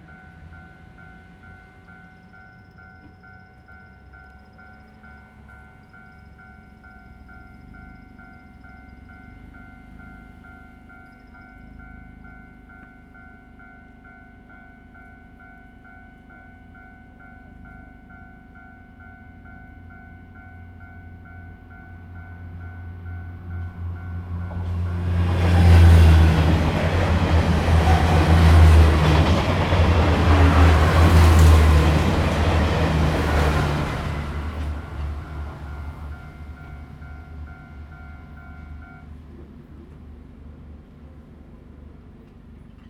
Fengzheng Rd., Shoufeng Township - Train traveling through
the sound of Train traveling through, Traffic Sound, Very hot weather
Zoom H2n MS+XY
Shoufeng Township, Hualien County, Taiwan, 28 August 2014, 12:39